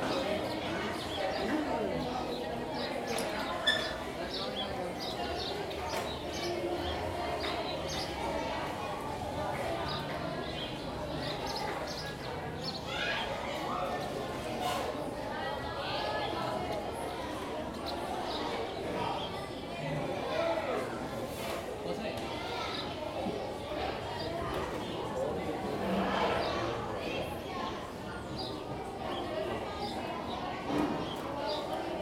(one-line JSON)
{"title": "Chigorodó, Chigorodó, Antioquia, Colombia - Colegio Laura Montoya en jornada escolar", "date": "2014-12-06 10:25:00", "description": "Students having break between classes in the Laura Montoya School.\nRecorder: Zoom H2n XY technique", "latitude": "7.66", "longitude": "-76.67", "altitude": "35", "timezone": "America/Bogota"}